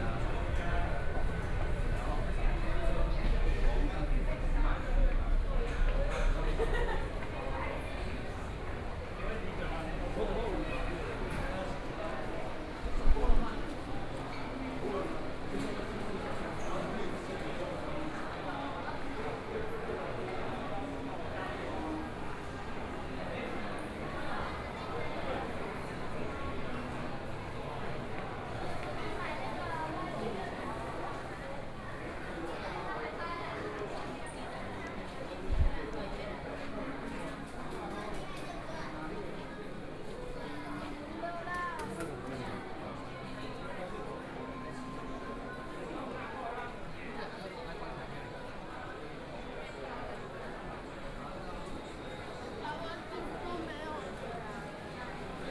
中正區黎明里, Taipei City - Shopping Street
walking in the Underground shopping street, Through a variety of different shops
Please turn up the volume a little
Binaural recordings, Sony PCM D100 + Soundman OKM II